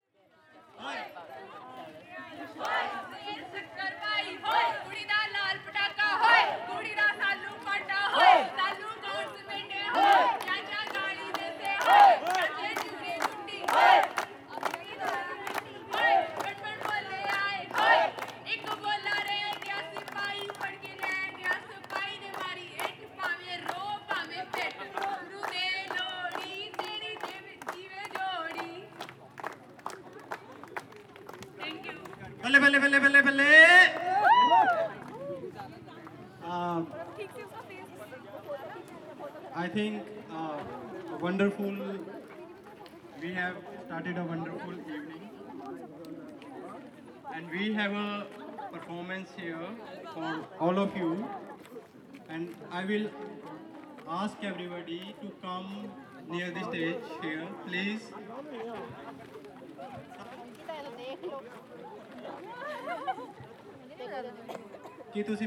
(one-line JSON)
{"title": "Koyna Hostel, Vasant Kunj, and 6 Park, Jawaharlal Nehru University, New Delhi, Delhi, India - 12 Lohri Festival", "date": "2016-02-12 01:04:00", "description": "Recording of a Lohri festival at JNU University\nZoom H2n + Soundman OKM", "latitude": "28.54", "longitude": "77.16", "altitude": "258", "timezone": "Asia/Kolkata"}